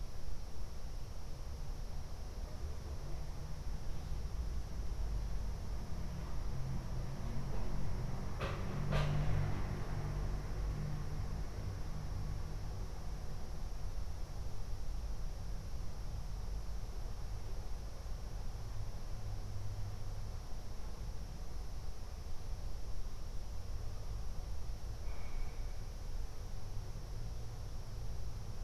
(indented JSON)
{
  "title": "Ascolto il tuo cuore, città, I listen to your heart, city. Several chapters **SCROLL DOWN FOR ALL RECORDINGS** - Terrace August 10th afternoon in the time of COVID19 Soundscape",
  "date": "2020-08-10 14:41:00",
  "description": "\"Terrace August 10th afternoon in the time of COVID19\" Soundscape\nChapter CXXIV of Ascolto il tuo cuore, città. I listen to your heart, city\nMonday, August 10th, 2020. Fixed position on an internal terrace at San Salvario district Turin five months after the first soundwalk (March 10th) during the night of closure by the law of all the public places due to the epidemic of COVID19.\nStart at 2:41 p.m. end at 3:12 p.m. duration of recording 30'49''",
  "latitude": "45.06",
  "longitude": "7.69",
  "altitude": "245",
  "timezone": "Europe/Rome"
}